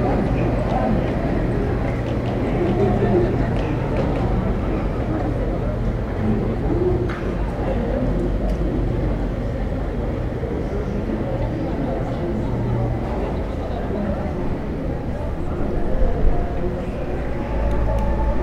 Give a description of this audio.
Place métropole, à la fin passage du petit train touristique, brouhaha de terrasse de bar, travaux.